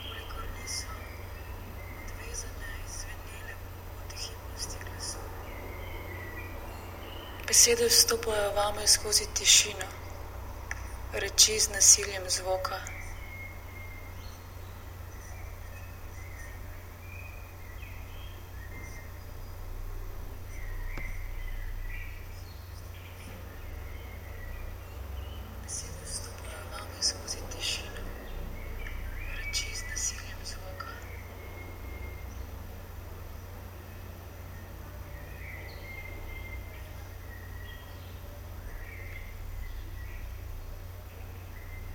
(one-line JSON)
{"title": "desk, mladinska, maribor - spoken words, stream, radio aporee", "date": "2014-07-02 10:19:00", "description": "reading last words of this long text, listening ending moment, just before sending ...", "latitude": "46.56", "longitude": "15.65", "altitude": "285", "timezone": "Europe/Ljubljana"}